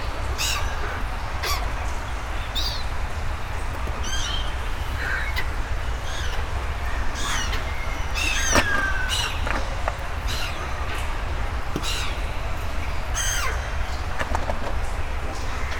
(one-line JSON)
{"title": "Vilvoorde, Belgium - Seagulls eating shit or something like", "date": "2017-12-10 07:55:00", "description": "Into the underground Senne river, everything is very-very dirty. Is it a river or is it a sewer ? That's not very clear for me. This river is contaminated, it's smelly. Sludge are grey and sticky. It's disgusting. At the end of the tunnel, seagulls are eating some small things floating on the water (is it still water ?). Sorry for the quite patronizing tittle, but it was unfortunately something like that.", "latitude": "50.93", "longitude": "4.41", "altitude": "12", "timezone": "Europe/Brussels"}